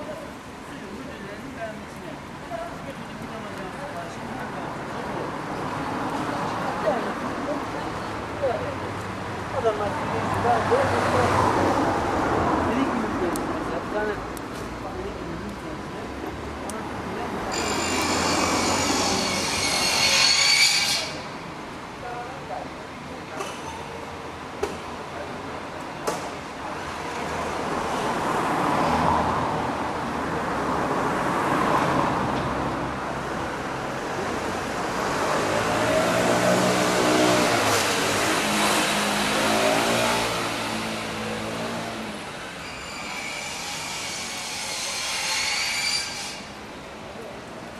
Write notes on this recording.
Fullmoon on Istanbul, continuing uphill 19 Mayıs Caddesi